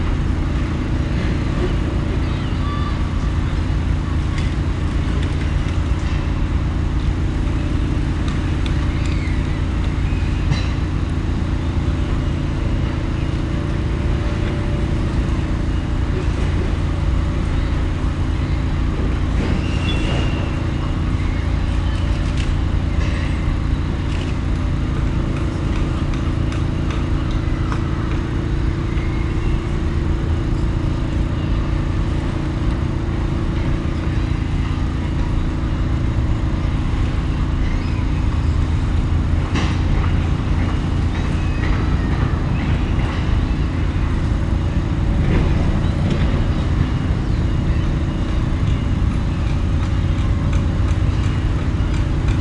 Oliphant St, Poplar, London, UK - RHG #1
Recorded with a pair of DPA 4060s and a Marantz PMD661.